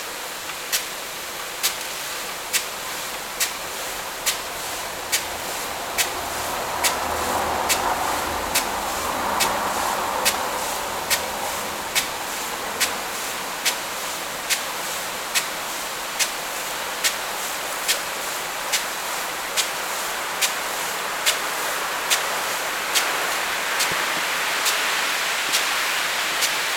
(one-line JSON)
{"title": "Póvoa de Varzim, Portugal - Corn watering Balazar", "date": "2016-08-19 22:45:00", "description": "Watering of corn at night, some cars, Zoom H6", "latitude": "41.41", "longitude": "-8.65", "altitude": "48", "timezone": "Europe/Lisbon"}